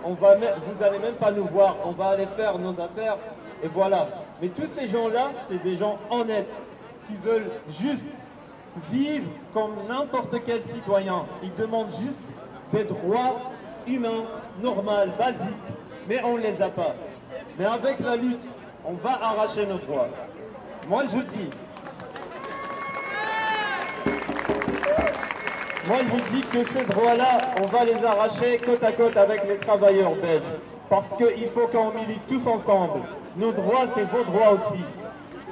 A lot of collectives for rights for sans papiers protest against the violence of Belgian migration policies, joining the Transnational Migrants’ Struggle "to make this May 1st a day for the freedom, the power and dignity of migrants. A day of strike against the institutional racism that supports exploitation and reproduces patriarchal violence."